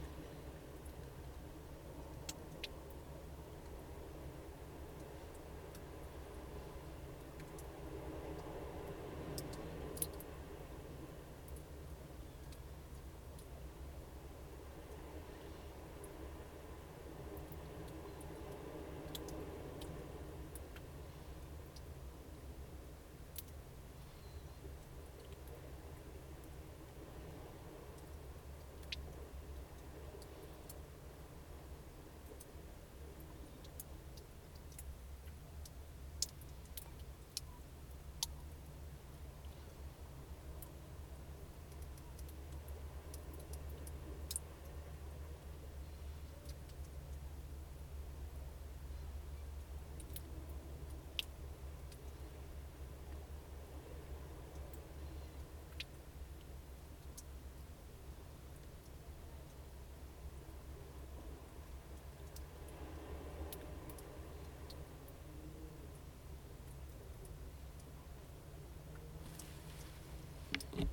Kitchener Road, Takapuna, Auckland, New Zealand - water drips in lava cave
Crouched inside a lava cave, listening to drips fall from the ceiling, amidst the Fossil Forest
Auckland, New Zealand / Aotearoa, 6 August 2020